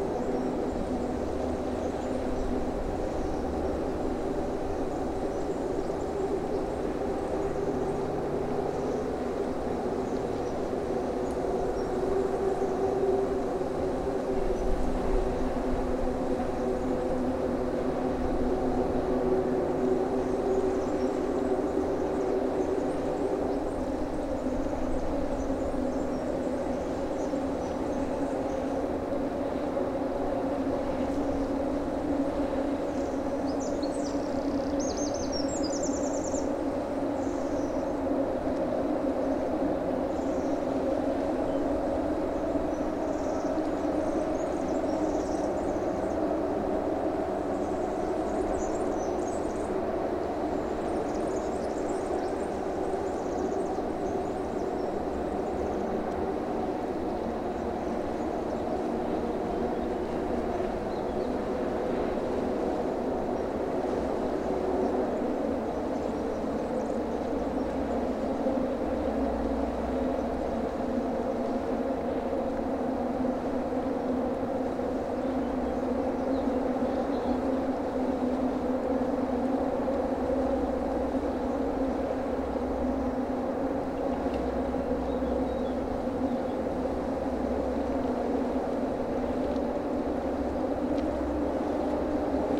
Almada, Portugal - Bridge cars and birds
Sounds of cars crossing the Tagus (tejo) river through bridge, a train and nearby birds. Recorded with a MS stereo set (AKG CK91/94) into a Tascam dr-70d.
18 March 2015, 11:42